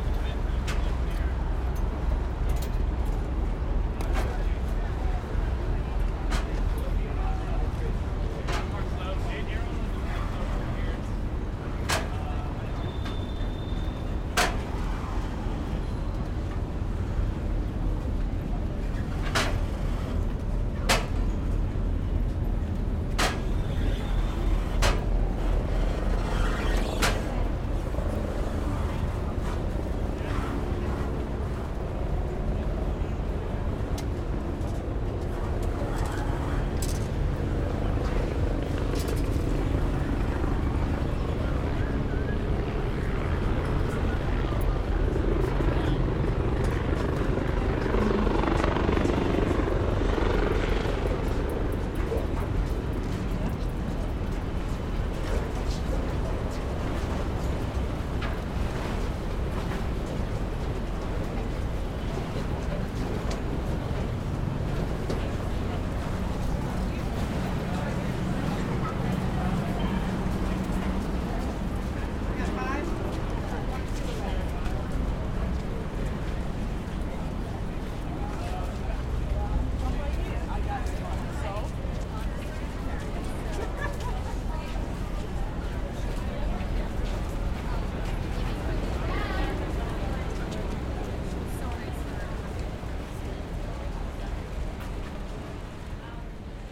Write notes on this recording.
Sounds of the Rockaway Ferry docking at Wall Street/Pier 11.